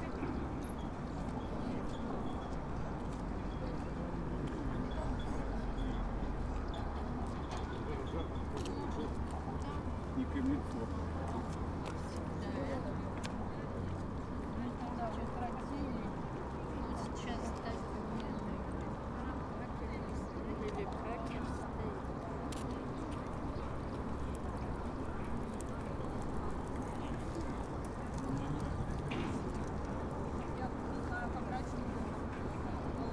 Речной вокзал, Барнаул, Алтайский край, Россия - River station
Barnaul river station on Ob river. Voices, ambience, announcements in Russian.